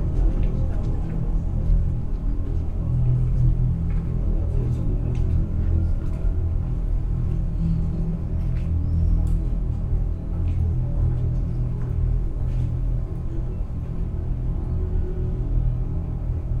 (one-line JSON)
{"title": "Laboratooriumi Tn drain, Tallinn", "date": "2011-07-06 13:40:00", "description": "recording a drain on Laboratooriumi Street as part of the Drainscapes workshop during Tuned City Talllinn", "latitude": "59.44", "longitude": "24.75", "altitude": "25", "timezone": "Europe/Tallinn"}